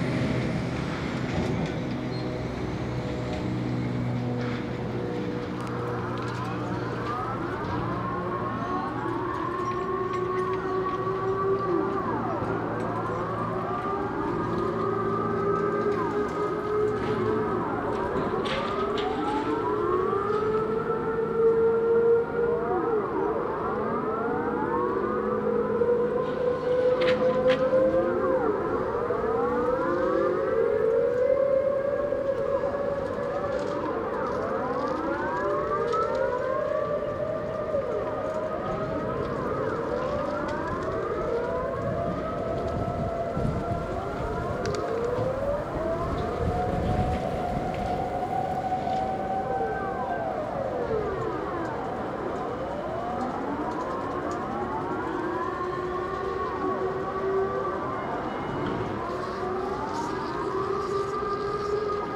Binckhorst - Luchtalarm Test Dec 2011
Monthly Dutch sirens test in the industrial area of Binckhorst. Binaural recording.
Binckhorst Mapping Project